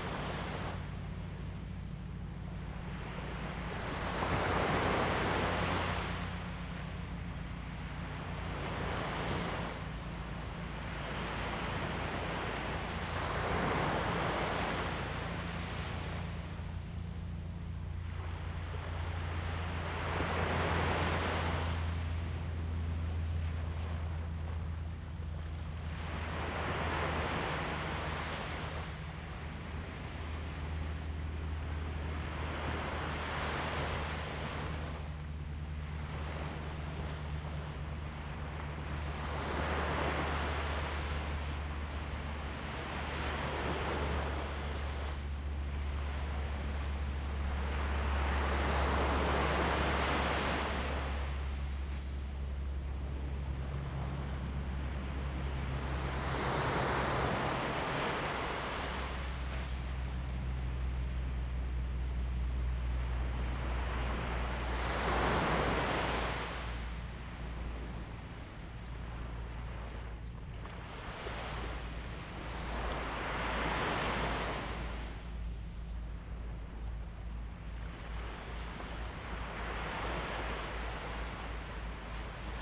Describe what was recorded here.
Sound of the waves, Zoom H2n MS +XY